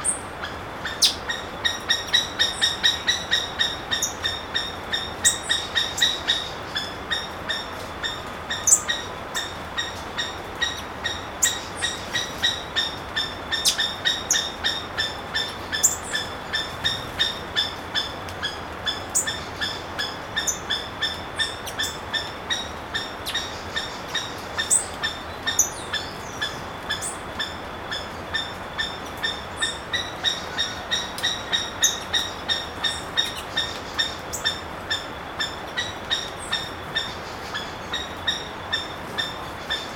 Seriema bird on early morning in the interior of Minas Gerais, Brazil.
Região Sudeste, Brasil, 24 February 2021